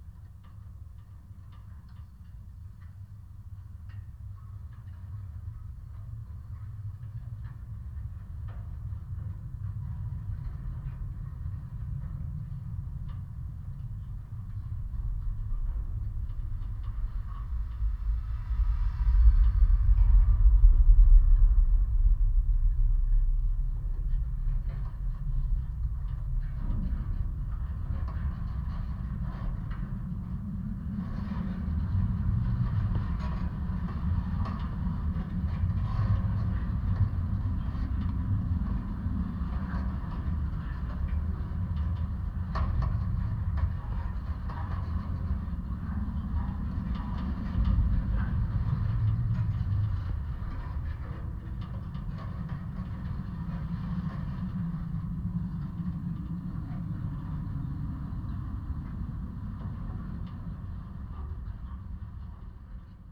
contact mic on the metallic fence